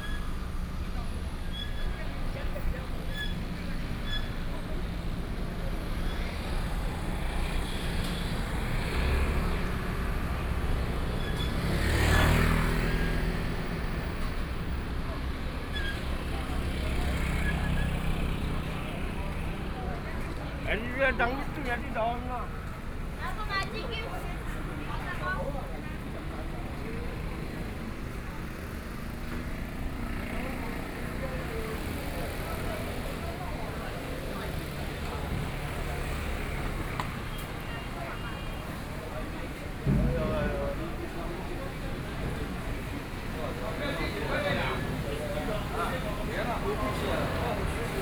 Walking in traditional markets, Traffic Sound, Hot weather
Sony PCM D50+ Soundman OKM II